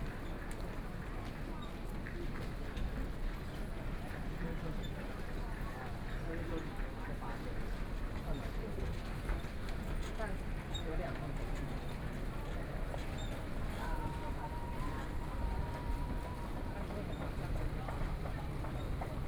Walking To MRT station, Traffic Sound, The crowd